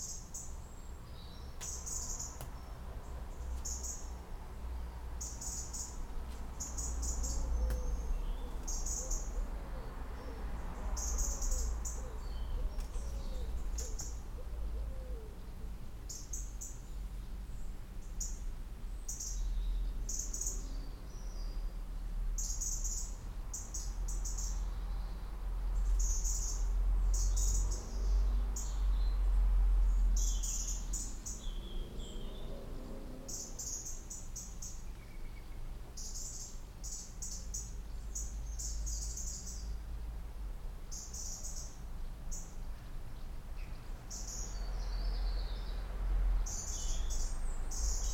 Abergavenny, UK - Garden Birds first thing in the morning
Recorded with LOM Mikro USI's, and a Sony PCM-A10.